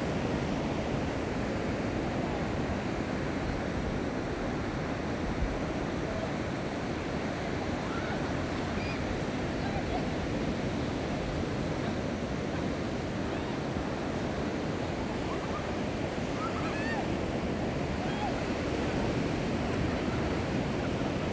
On the coast, Sound of the waves, Very hot weather
Zoom H6+ Rode NT4